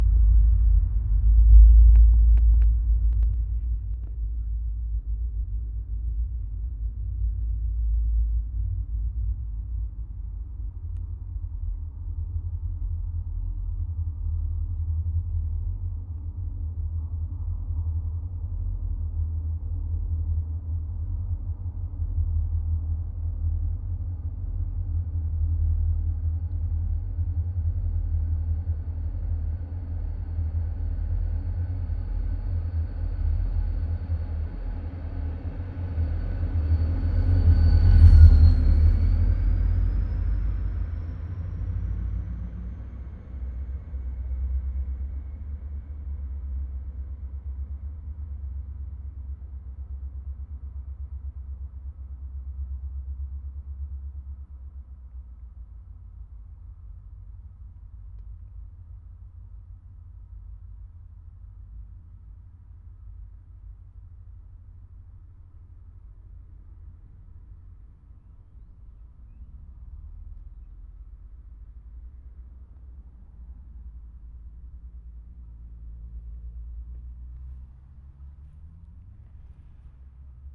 La Creu del Grau, València, Valencia, Spain - train coming out of the tunnel
a deep bass drone is audible long time before the train comes out of the tunnel
recorded with olympus ls-14
March 2016